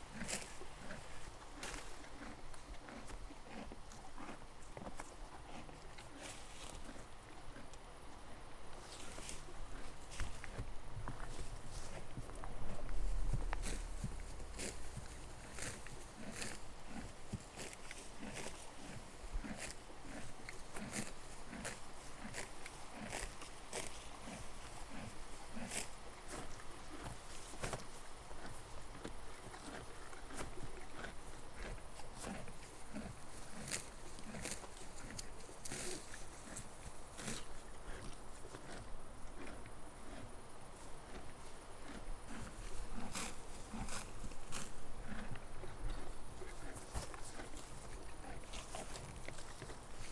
Unnamed Road, Saint-Bonnet-le-Chastel, France - Horse eating and farting
France métropolitaine, France, 28 August, 18:30